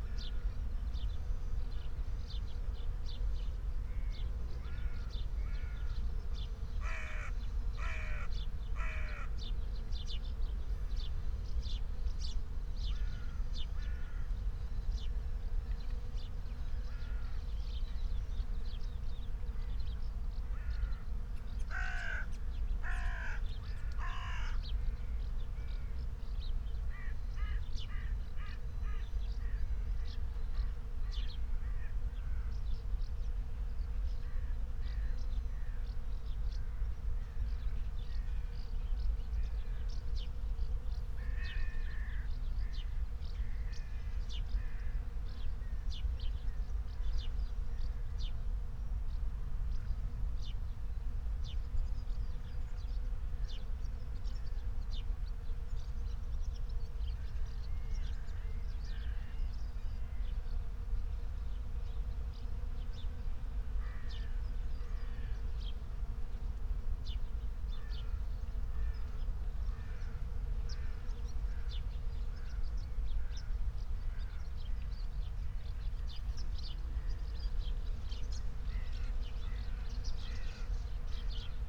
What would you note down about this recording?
09:31 Berlin, Tempelhofer Feld - field ambience at morning